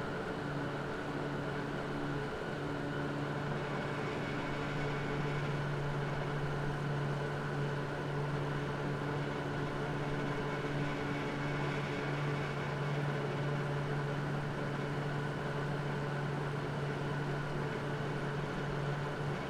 Kienberg, Berlin - summer bobsled run
a metal bobsled arrives, transporting systems gets activated.
(SD702, SL502 ORTF)